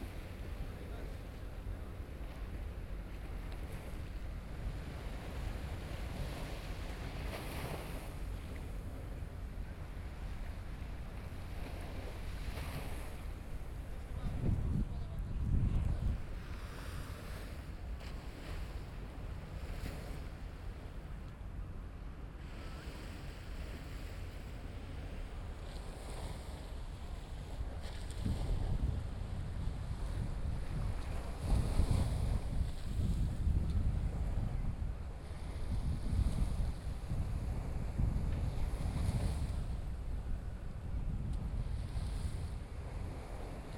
Barcelona: Beach in november
beach, november, waves, barcelona, people, talking